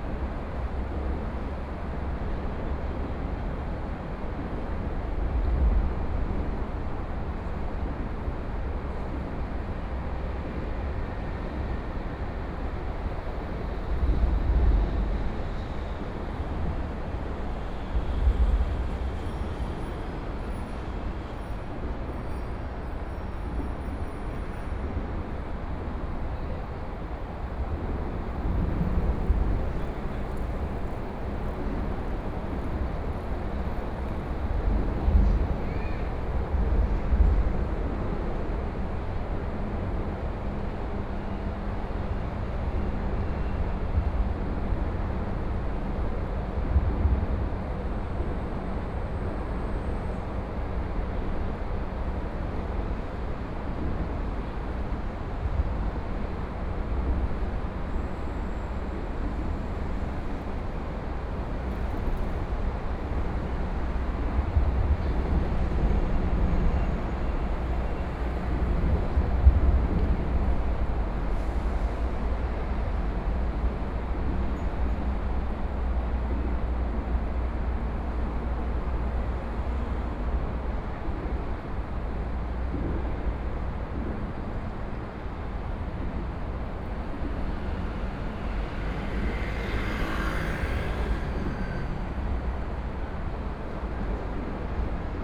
中山區圓山里, Taipei City - Highway
On the highway below, .Sunny afternoon
Please turn up the volume a little
Binaural recordings, Sony PCM D100 + Soundman OKM II